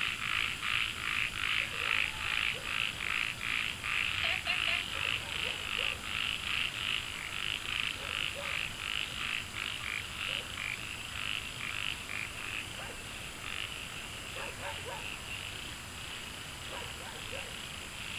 茅埔坑溼地, 南投縣埔里鎮桃米里 - Frogs chirping
Frogs chirping, Dogs barking, In Wetland Park
10 August 2015, 21:07